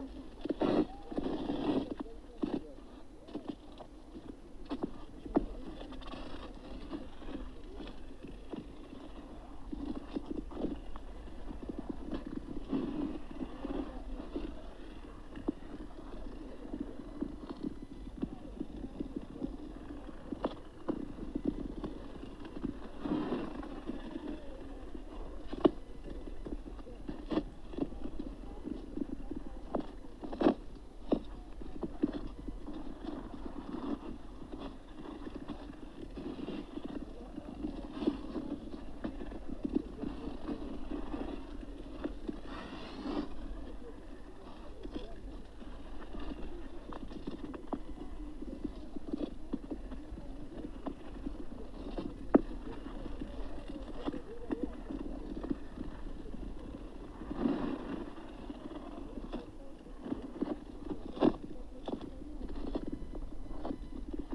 Utena, Lithuania, ice skaters
frozen lake, ice skaters. contact mics on ice